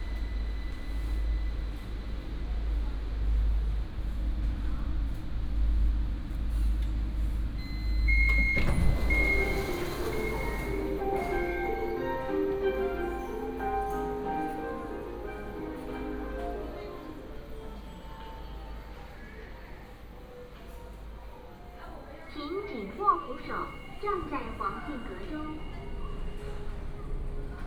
Orange Line (KMRT), Fongshan, Kaohsiung City - Take the MRT
Take the MRT, In-car message broadcasting
30 March 2018, 10:05